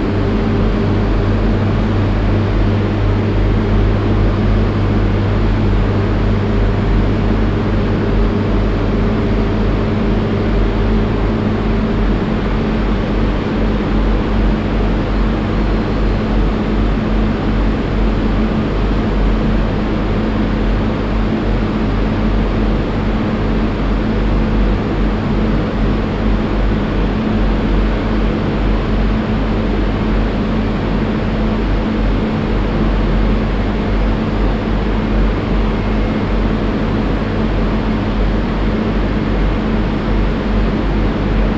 Stadt-Mitte, Düsseldorf, Deutschland - Düsseldorf, Discothek Nachtresidenz
Inside the empty two floor hall of the discothek Nachtresidenz ( a former old cinema) - The sounds of the refrigerators and ventilaltion reverbing in the big and high brick stone wall place. At the end an ambulance sirene that comes in from the main street outside.
This recording is part of the intermedia sound art exhibition project - sonic states
soundmap nrw -topographic field recordings, social ambiences and art places
Düsseldorf, Germany, 3 January 2013, 16:00